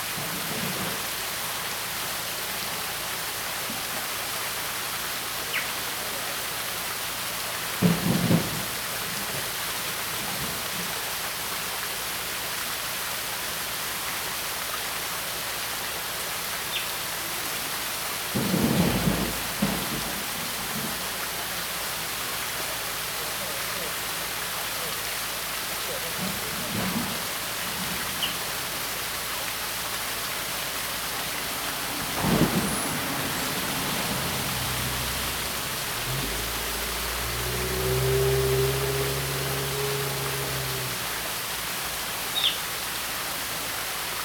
Pubu Road, Wulai Dist., New Taipei City - Sound of water and Birds
Sound of water, Birds call, Track construction
Zoom H2n MS+ XY
New Taipei City, Taiwan, 5 December